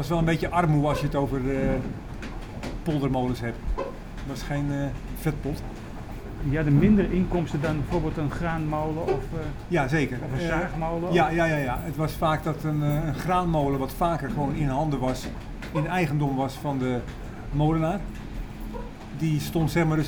Molenaar Kees vertelt over de poldermolen vroeger
9 July 2011, ~17:00